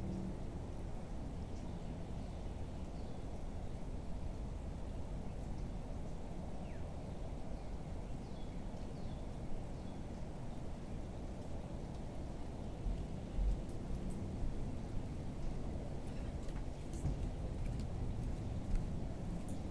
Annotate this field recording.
On the trestle bridge of Tanyard Creek Park, which passes directly under a set of active railroad tracks. I arrived here just in time to record a train passing overhead, which can be heard as a low rumble with occasional banging and scraping. Other visitors passed through this area as well. The creek has a very faint trickle which can be heard when the train slows down and eventually halts. [Tascam Dr-100mkiii & Primo Clippy EM-272]